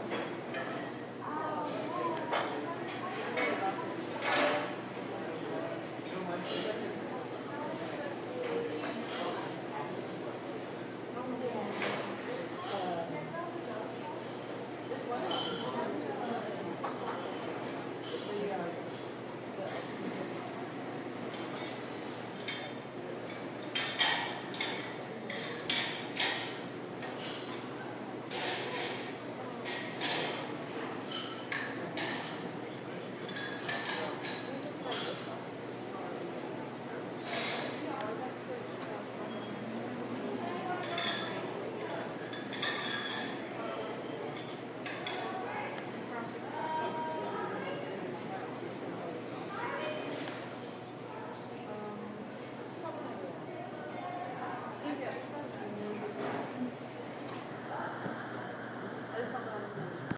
Vassar College, Raymond Avenue, Poughkeepsie, NY, USA - Deece
Recorded inside the ACDC (Deece) at around 11 AM on Monday morning. Recorded with an iPhone
2 March 2015, 11:00